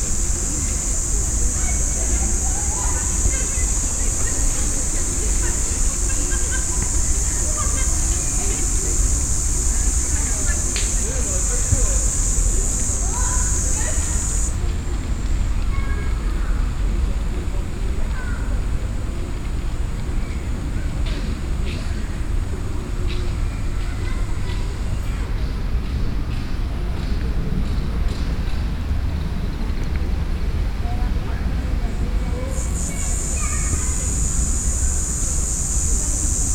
Lyon, Rue Chaziere, at the Villa Gillet
Children playing, insects.